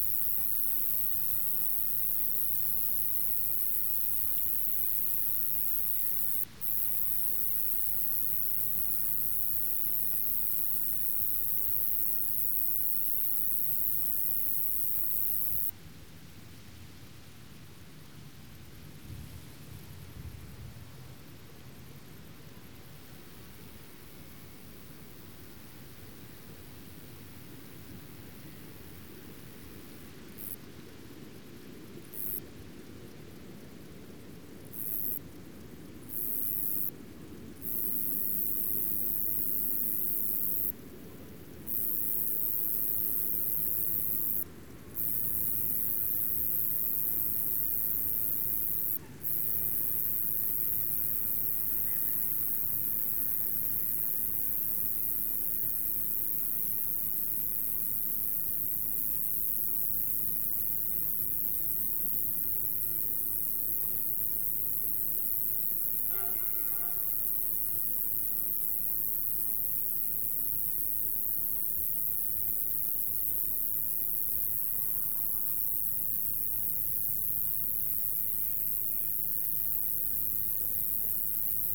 zooming on a cricket, long sustain chirp
Polska, European Union, 5 July 2013